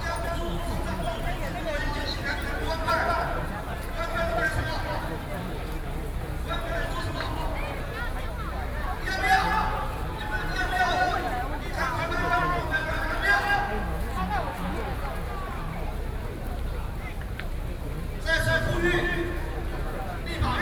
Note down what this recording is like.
Protest against the government, A noncommissioned officer's death, Sony PCM D50 + Soundman OKM II